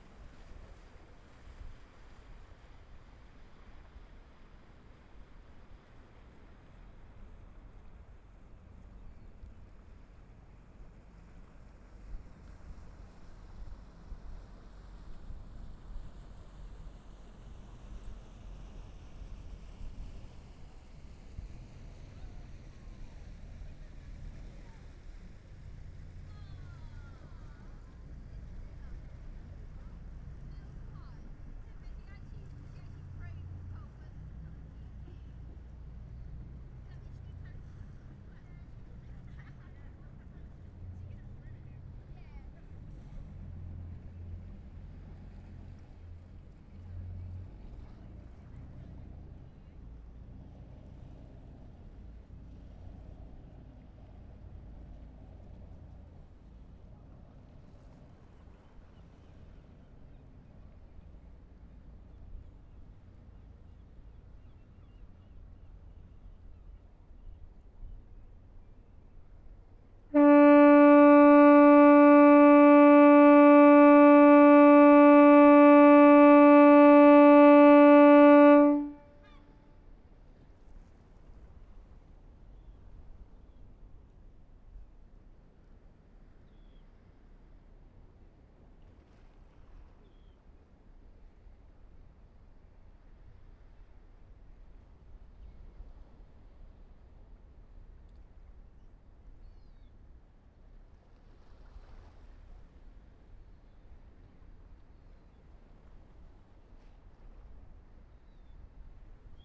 Seahouses breakwater, UK - Foghorn ... Seahouses ...
Foghorn ... Seahouses harbour ... air powered device ... attached to the only hexagonal light house in the country ... allegedly ..? pub quizzers please note ... open lavalier mics clipped to base ball cap ...
September 2017